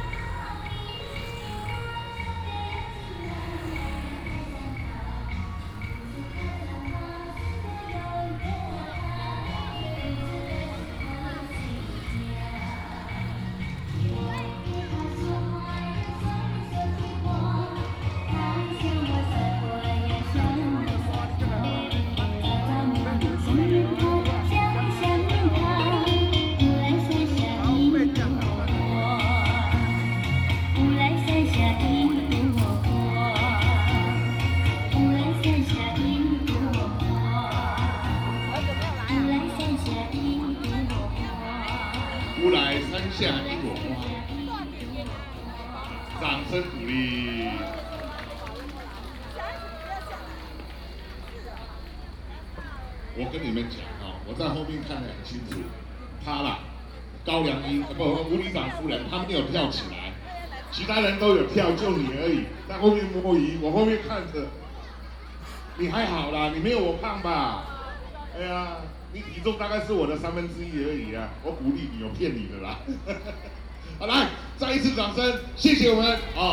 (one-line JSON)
{"title": "石城復興宮, Dongshi Dist., Taichung City - Community party", "date": "2017-11-01 19:53:00", "description": "Community party, traffic sound, Binaural recordings, Sony PCM D100+ Soundman OKM II", "latitude": "24.29", "longitude": "120.79", "altitude": "290", "timezone": "Asia/Taipei"}